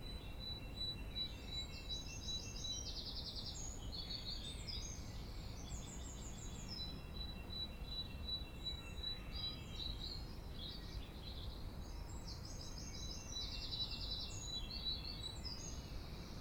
West Bridgford, Nottingham - Morning chorus
Nottingham, UK